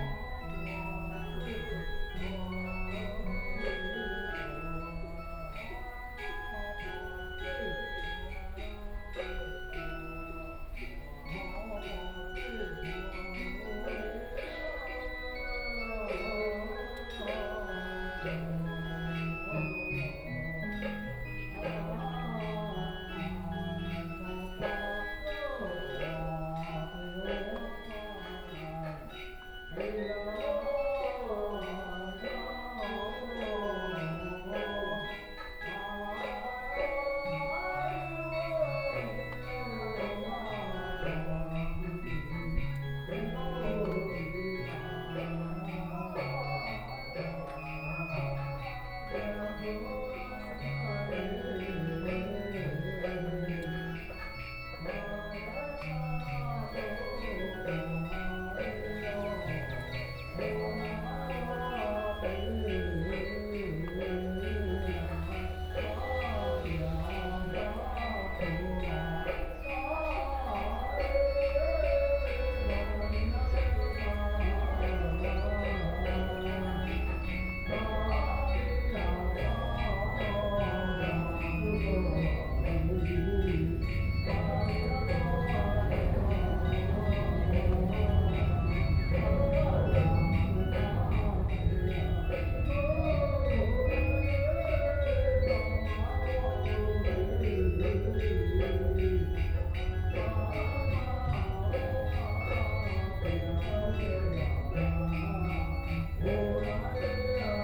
馬崗漁村, New Taipei City - Funeral sounds
Funeral sounds, Small fishing village, Traffic Sound, Very hot weather
Sony PCM D50+ Soundman OKM II
New Taipei City, Taiwan